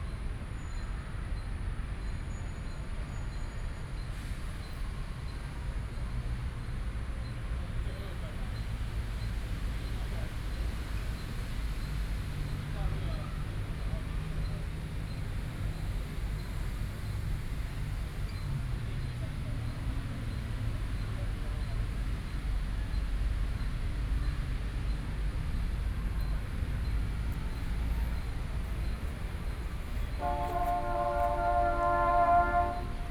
Chiang Kai-Shek Memorial Hall Station, Taipei - SoundWalk
walking into the MRT Station, Sony PCM D50 + Soundman OKM II